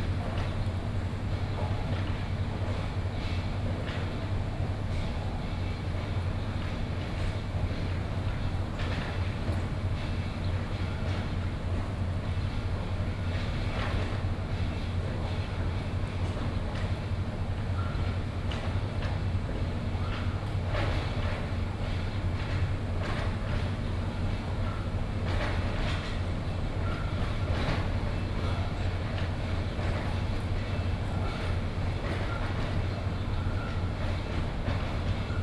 Jiaxing Park, Da’an Dist., Taipei City - Sound of the construction site
Sound from construction site, Traffic Sound, in the park
30 July 2015, ~17:00